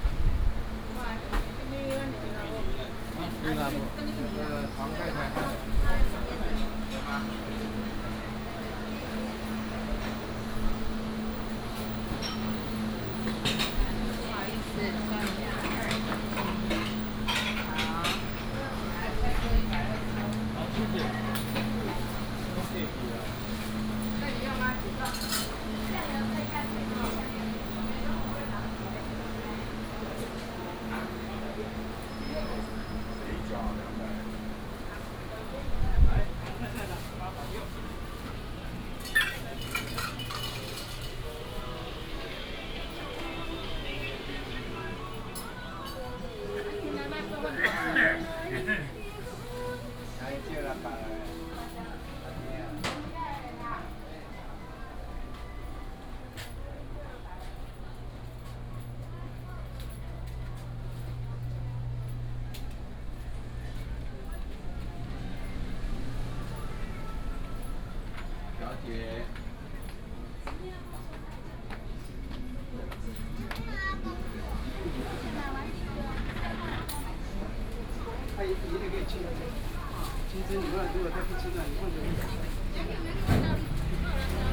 東門市場, Zhongzheng Dist., Taipei City - old traditional Markets
Walking through the Traditional Taiwanese Markets, Traffic sound, vendors peddling, Binaural recordings, Sony PCM D100+ Soundman OKM II
25 August 2017, 10:13